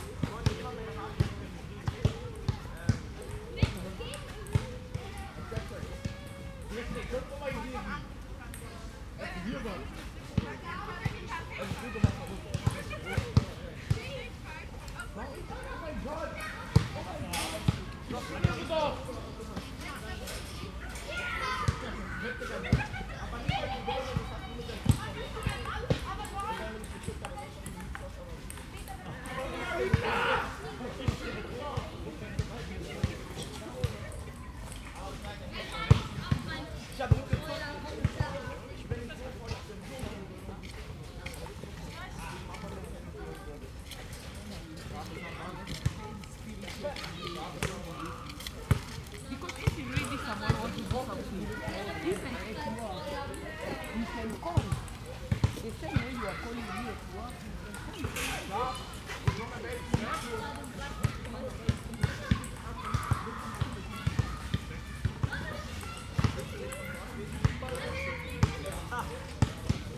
Sebastianstraße, Berlin, Germany - Ballgames and kids in Luisenstädtischer Kirchpark
These playground always seem very popular. The weather was fine and there are a lot of people around. The autumn colours were great too with leaves thick upon the ground. There are some big trees here including one shimmering aspen with an intriguing hollow trunk.